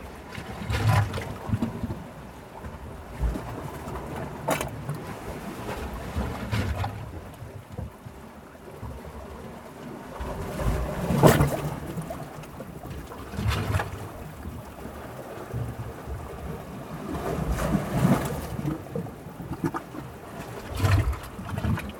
Unnamed Road, Kandanos Selinos, Greece - Mild waves on rocky beach